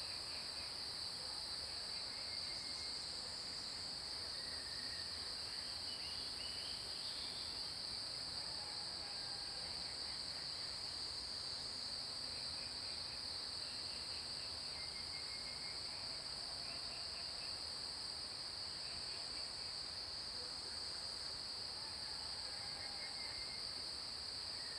種瓜路4-2號, Puli Township - Early morning
Crowing sounds, Bird calls, Cicada sounds, Early morning
Zoom H2n MS+XY
Nantou County, Taiwan, 3 September, ~06:00